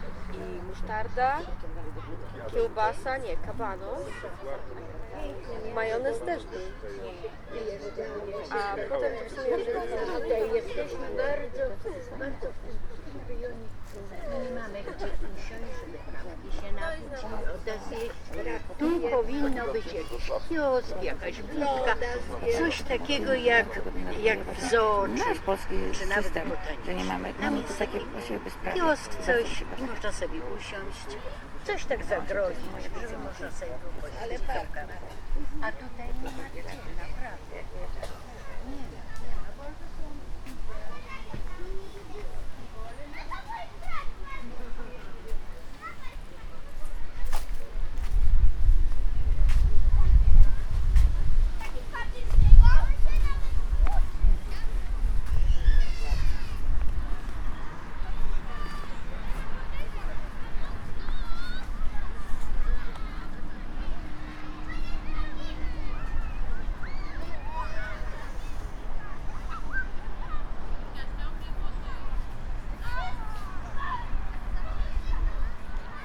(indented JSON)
{
  "title": "Wroclaw, Survival 2011, Birds Radio",
  "date": "2011-06-25 17:20:00",
  "description": "Birds Radio sound installation by Might Group during Survival 2011",
  "latitude": "51.12",
  "longitude": "17.05",
  "altitude": "119",
  "timezone": "Europe/Warsaw"
}